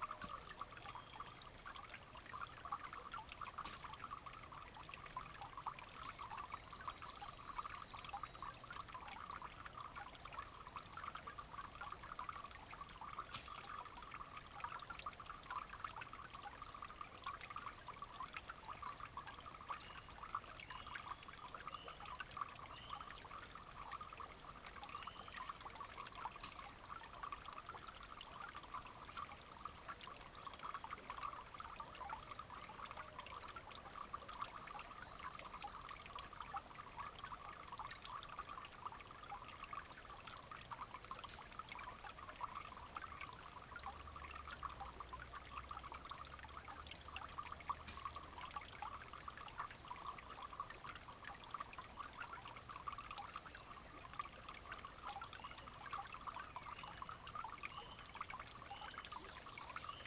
Lone Wolf Trail Spring, Ballwin, Missouri, USA - Lone Wolf Hill
Recording of a spring emptying into a pool at the base of steep hill along Lone Wolf Trail in Castlewood State Park. A deer higher up the hill can be heard stomping its scent into the ground.